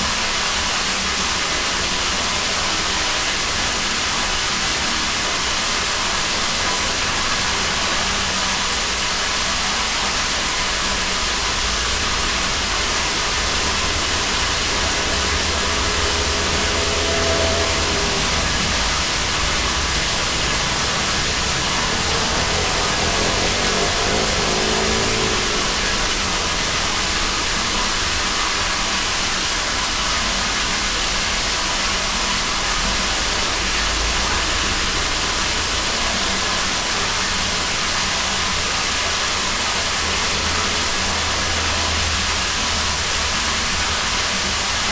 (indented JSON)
{
  "title": "Binckhorstlaan, Den Haag - White Box",
  "date": "2012-02-06 18:25:00",
  "description": "A strange white box with a ventilation opening on both sides. Water seemed to be running inside.\nRecorded using a Senheiser ME66, Edirol R-44 and Rycote suspension & windshield kit.",
  "latitude": "52.06",
  "longitude": "4.34",
  "altitude": "2",
  "timezone": "Europe/Amsterdam"
}